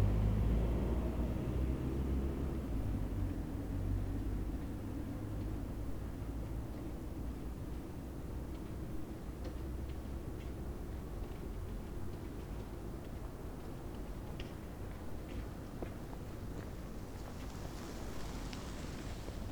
Berlin: Vermessungspunkt Maybachufer / Bürknerstraße - Klangvermessung Kreuzkölln ::: 16.12.2010 ::: 01:23
Berlin, Germany, 16 December 2010